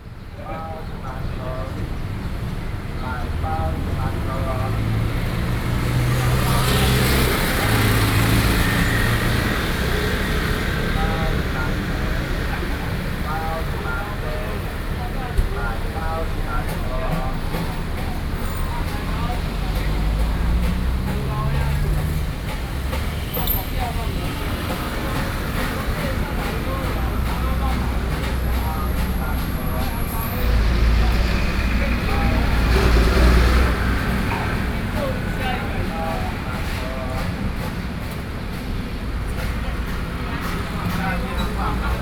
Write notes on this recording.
Trafficking buns sound, Sony PCM D50+ Soundman OKM II